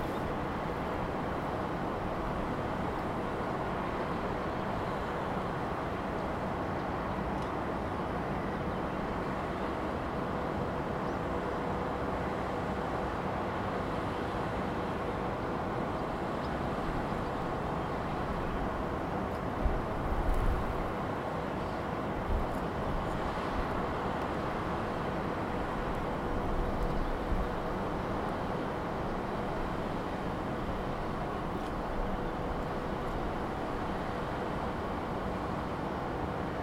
Ukraine / Vinnytsia / project Alley 12,7 / sound #10 / Roshen plant
Вінницька область, Україна, 27 June